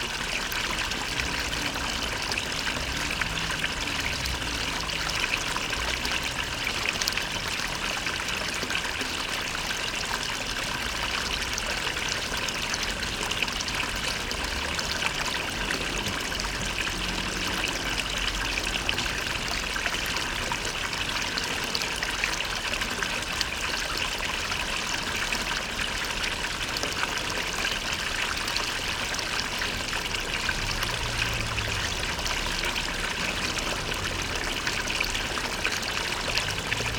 {
  "title": "Lavoir Saint Léonard Honfleur (B1)",
  "date": "2011-02-18 18:40:00",
  "description": "Lavoir Saint Léonard à Honfleur (Calvados)",
  "latitude": "49.42",
  "longitude": "0.23",
  "altitude": "7",
  "timezone": "Europe/Paris"
}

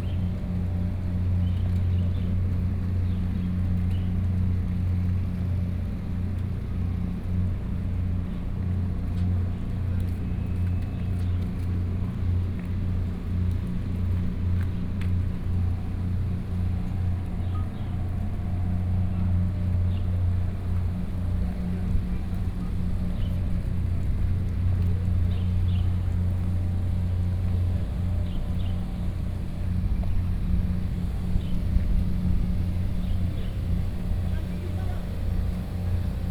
{
  "title": "Nanfang-ao Port, Su'ao Township - soundwalk",
  "date": "2013-11-07 11:16:00",
  "description": "Rainy Day, Fishing harbor full of parked, Fishing boat motor sound, Binaural recordings, Zoom H4n+ Soundman OKM II",
  "latitude": "24.58",
  "longitude": "121.87",
  "altitude": "4",
  "timezone": "Asia/Taipei"
}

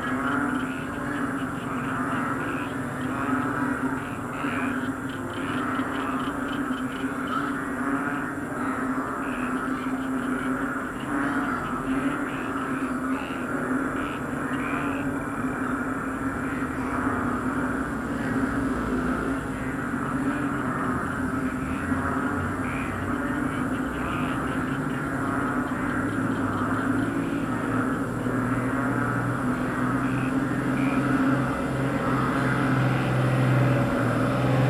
{
  "title": "San Francisco, Biñan, Laguna, Filippinerna - Biñan Palakang Bukid #1",
  "date": "2016-07-17 01:04:00",
  "description": "After som heavy rain in the evening before, there is full activity of the frogs in the field nearby! I belive several hundreds of them in chorus. Palakang bukid is the filipino name of this frog.",
  "latitude": "14.33",
  "longitude": "121.06",
  "altitude": "13",
  "timezone": "Asia/Manila"
}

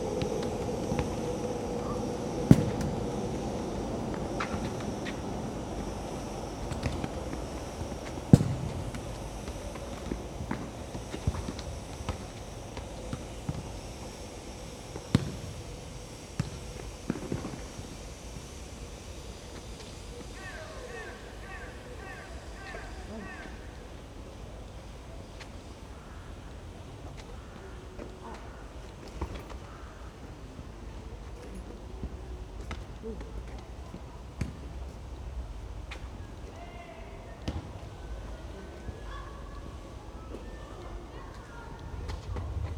{
  "title": "Bergfriedstraße, Berlin, Germany - Family football, drilling, crows - archetype Berlin backyard soundscape",
  "date": "2020-11-04 16:31:00",
  "latitude": "52.50",
  "longitude": "13.41",
  "altitude": "40",
  "timezone": "Europe/Berlin"
}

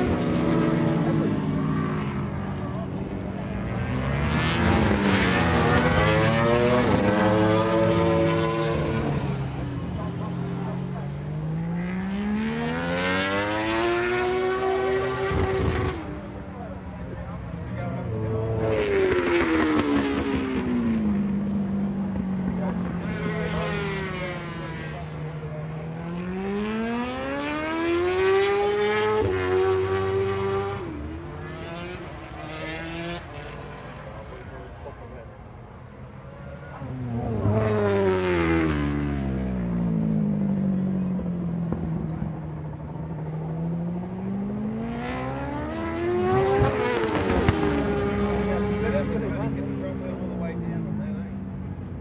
{
  "title": "Castle Donington, UK - British Motorcycle Grand Prix 2003 ... moto grand prix ...",
  "date": "2003-07-12 09:50:00",
  "description": "Free Practice ... part one ... Melbourne Loop ... Donington Park ... mixture of 990cc four strokes and 500cc two strokes ... associated noises ... footsteps on gravel ... planes flying into East Midlands Airport ... etc ... ECM 959 one point stereo mic to Sony Minidisk ...",
  "latitude": "52.83",
  "longitude": "-1.38",
  "altitude": "96",
  "timezone": "Europe/Berlin"
}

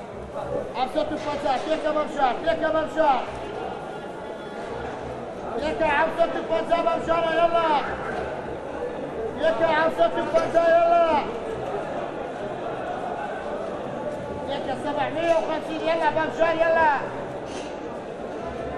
{
  "title": ":erbil: :fruit and vegetable suq: - one",
  "date": "2008-11-13 05:07:00",
  "latitude": "36.19",
  "longitude": "44.02",
  "altitude": "412",
  "timezone": "Asia/Baghdad"
}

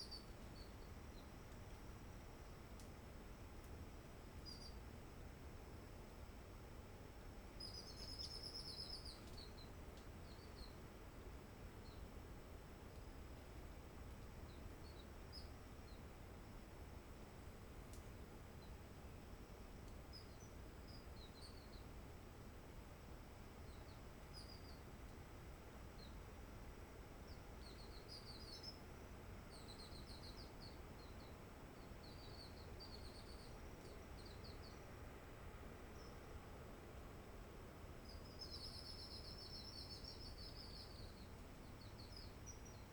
{
  "title": "Chemin de la Roche Merveilleuse, Réunion - 20200225 1433-1455",
  "date": "2020-02-25 14:33:00",
  "description": "Forêt de la Roche Merveilleuse: chant d'oiseaux du genre \"zostérops\" olivatus et borbonicus (oiseaux-lunette et oiseaux Q blanc)\nCe lieu est durement impacté par le tourisme par hélicoptère.",
  "latitude": "-21.12",
  "longitude": "55.48",
  "altitude": "1451",
  "timezone": "Indian/Reunion"
}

